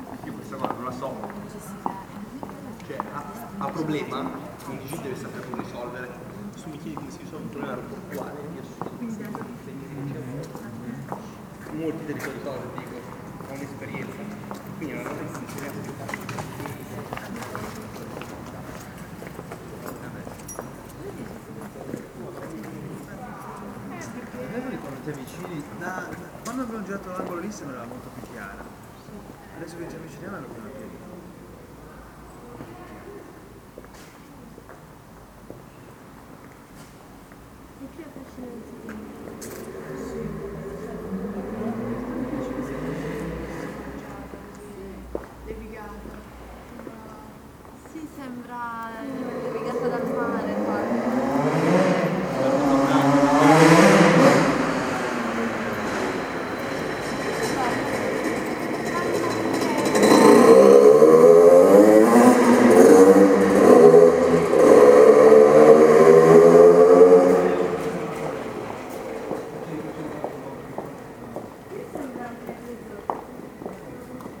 San Michele Church, Pavia, Italy - 02 - October, Sunday 8PM, dusk, 18C, small groups of people passing by
Same day as before, some hours later. Comfortable evening and nice climate to walk around. Few people passing by, some stopping and admiring the monument.
October 2012